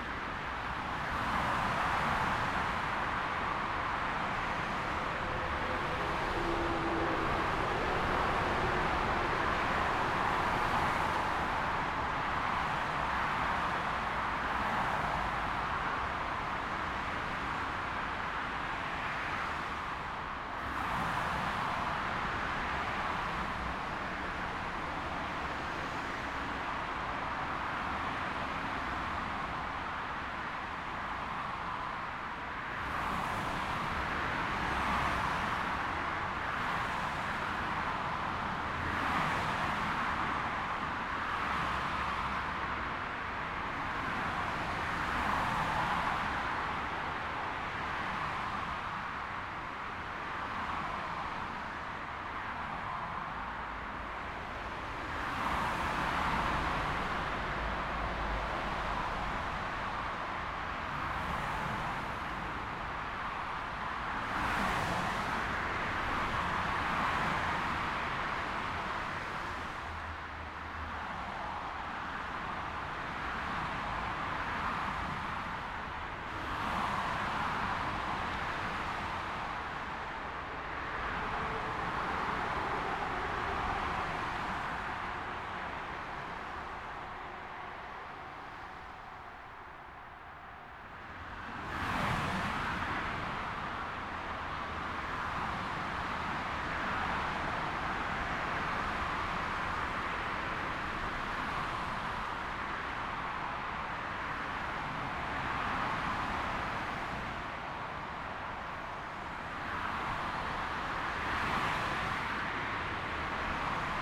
Nærum, Denmark - Vehicles on highway
Recording of vehicles passing on highway under a concrete overpass/bridge. MS recording with a Zoom H5 and the MSH-6 head. Figure 8 microphone oriented parallel to the road. Converted to stereo. No extra processing.
Danmark, 7 October